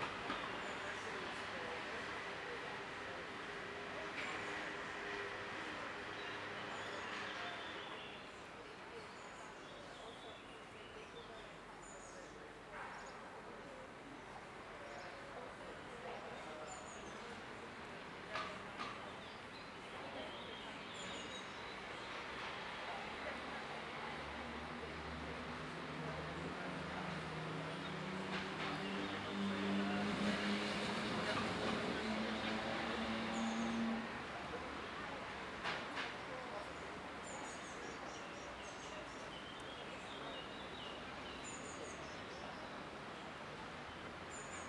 L'Aquila, Villa Comunale - 2017-05-22 09-Villa Comunale
L'Aquila AQ, Italy, 22 May 2017, 15:57